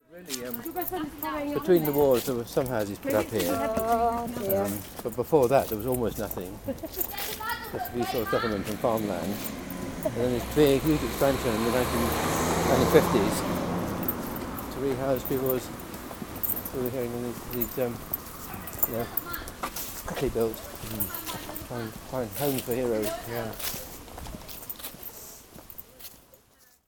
Walk Three: Homes for heroes
Plymouth, UK, October 2010